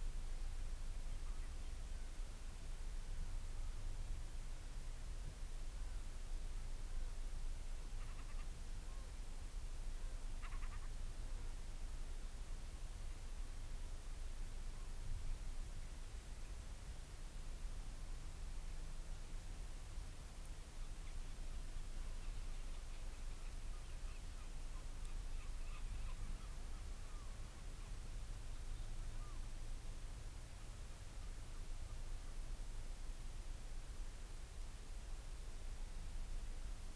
Marloes and St. Brides, UK - european storm petrel ...
Skokholm Island Bird Observatory ... storm petrel calls and purrings ... lots of space between the calls ... open lavalier mics clipped to sandwich box on bag ... calm sunny evening ...